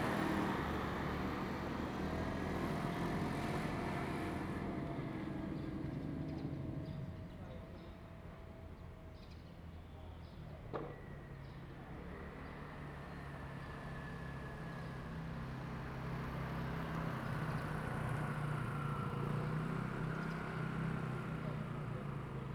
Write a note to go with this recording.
In the temple square, Birds singing, Traffic Sound, Zoom H2n MS+XY